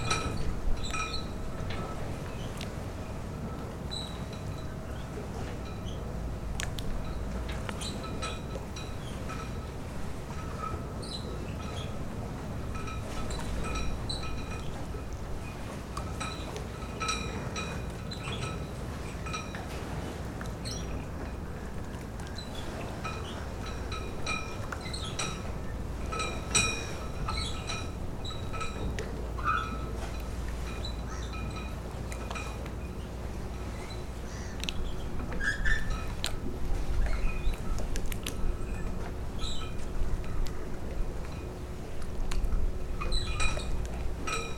riva degli schiavoni, venezia s. marco
Venezia, Italy, 26 October 2009, 02:10